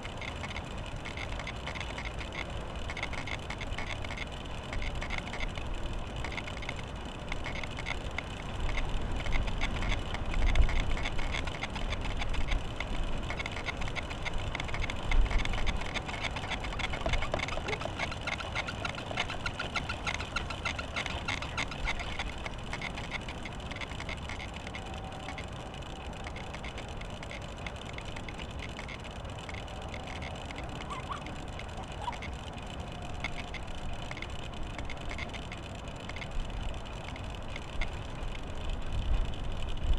Tempelhof, Berlin, Deutschland - wind wheel
Berlin Tempelhof, windy autumn day, improvised wind wheel
(SD702, Audio Technica BP4025)